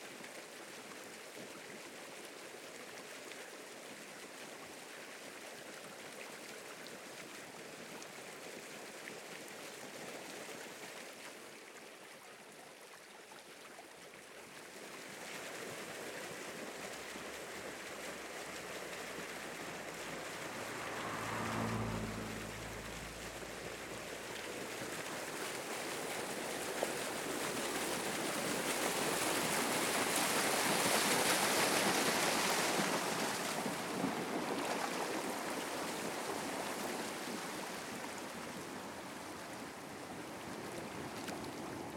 Epar.Od. Aminteou-Petras, Petres, Greece - Vilage of Petres
Περιφέρεια Δυτικής Μακεδονίας, Αποκεντρωμένη Διοίκηση Ηπείρου - Δυτικής Μακεδονίας, Ελλάς, 15 February 2022, ~19:00